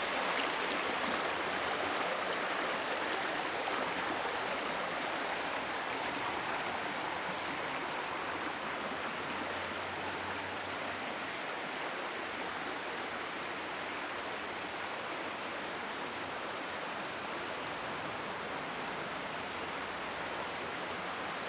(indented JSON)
{
  "title": "Holmfirth, West Yorkshire, UK - WLD 2015 Following the Holme downstream",
  "date": "2015-07-18 23:50:00",
  "description": "Walking along the river in the dark, following the direction of the river, the sound flows from left to right and the volume rises and falls.\nRecorded with a zoom H4n's internal mics.",
  "latitude": "53.57",
  "longitude": "-1.78",
  "altitude": "147",
  "timezone": "Europe/London"
}